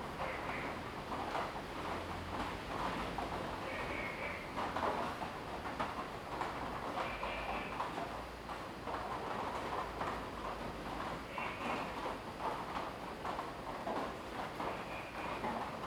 Woody House, 埔里鎮桃米里 - rain
Frog calls, rain
Zoom H2n MS+XY